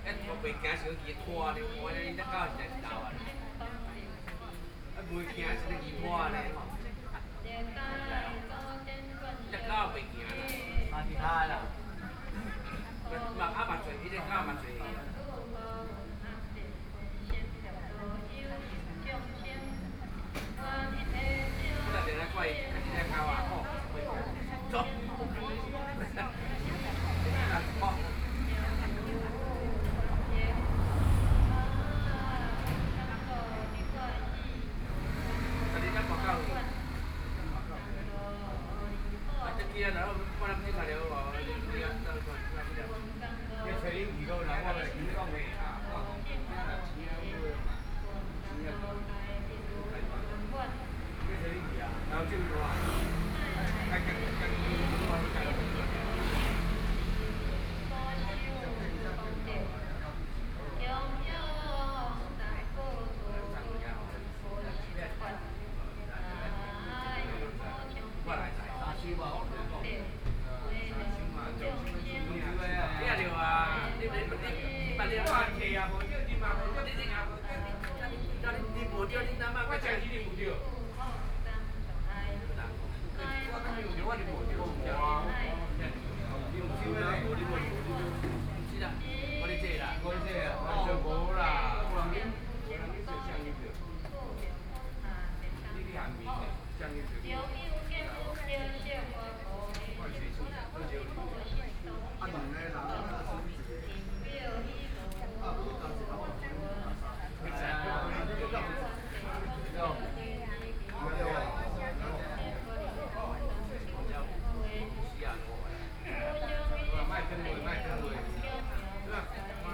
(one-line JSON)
{"title": "Ln., Sec., Zhongyang N. Rd., Beitou Dist. - Puja", "date": "2013-08-24 11:36:00", "description": "Puja, Sony PCM D50 + Soundman OKM II", "latitude": "25.14", "longitude": "121.49", "altitude": "17", "timezone": "Asia/Taipei"}